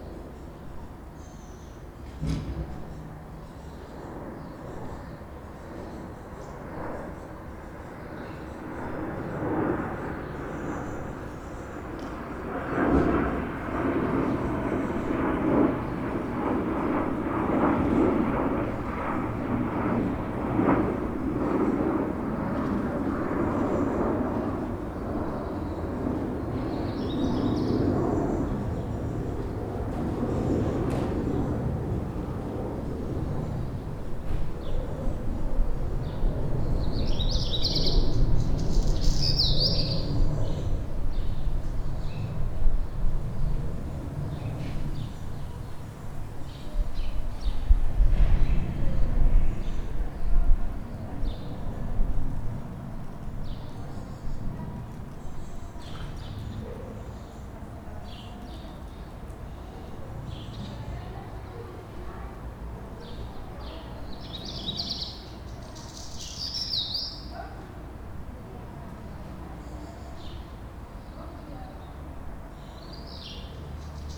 Birds in the schoolyard of Mermoz Elementary School, while children are in classrooms, quiet and calm.
Recorded with ZOOM-H4.